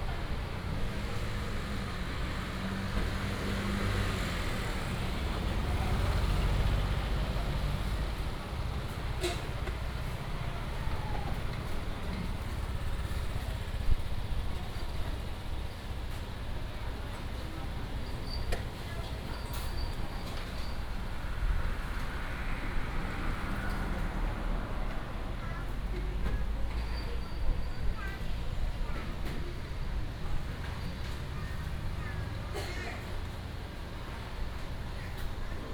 Traditional market entrance, Hot weather, traffic sound, Beside the store where lunch is sold
Binaural recordings, Sony PCM D100+ Soundman OKM II